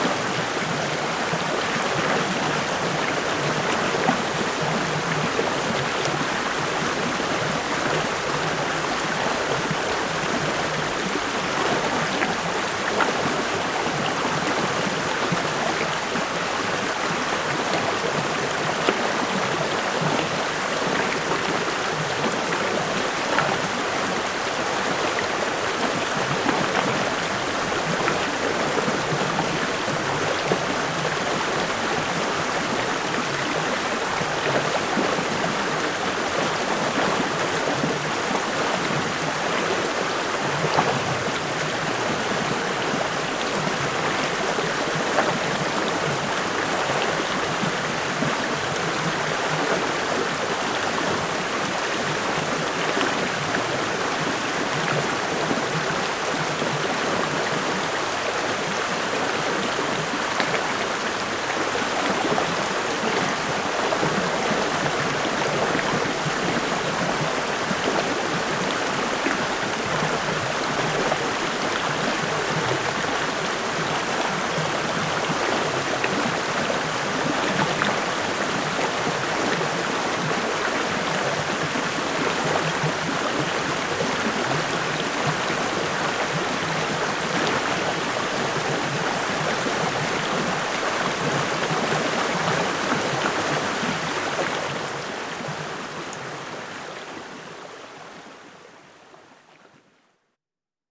Route de la Combe d'Ire Chevaline, France - L'Ire
Les bruissements de l'eau de L'Ire rivière qui coule au fond d'une combe dans les Bauges, tout près de la cabane n°4 du Festival des cabanes.
France métropolitaine, France, 16 August